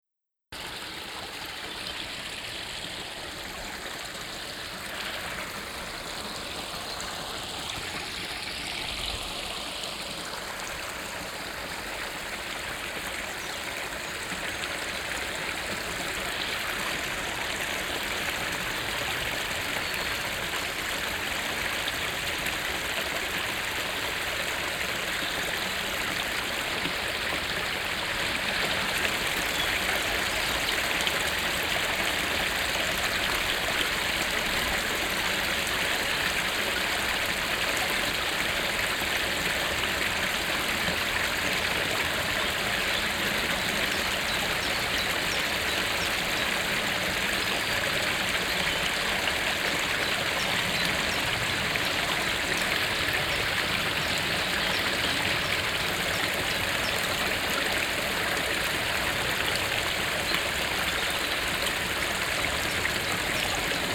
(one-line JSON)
{"title": "Bredeney, Essen, Deutschland - essen, wolfsbachtal, small stream", "date": "2014-04-18 17:30:00", "description": "Im Wolfsbachtal an einem kleinen Bach unter einer Fussgängerbrücke. Das Plätschern des Wassers in der waldigen Stille mit Vogelgesang an einem milden Frühlingstag.\nIn the Wolfsbachtal art a small stream under a pedestrian bridge. The sound of the water in the silence of the forrest with birfds singing at a mild spring day.\nProjekt - Stadtklang//: Hörorte - topographic field recordings and social ambiences", "latitude": "51.39", "longitude": "6.98", "altitude": "90", "timezone": "Europe/Berlin"}